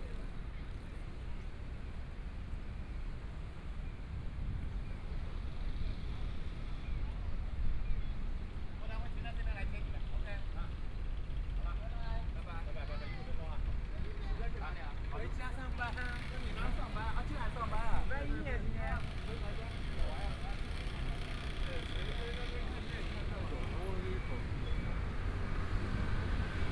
Linsen N. Rd., Zhongshan Dist. - soundwalk
Walking on the road （ Linsen N. Rd.）from Nanjing E. Rd. to Minsheng E. Rd., Traffic Sound, Binaural recordings, Zoom H4n + Soundman OKM II
欣欣百貨 Zhongshan District, Taipei City, Taiwan, 20 January 2014